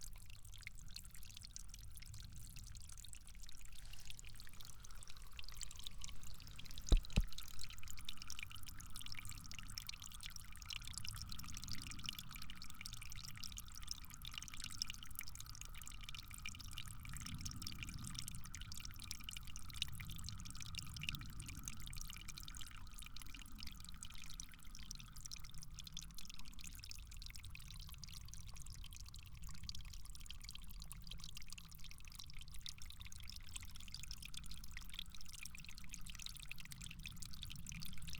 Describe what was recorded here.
getting dark already, with grey purple sky above the trees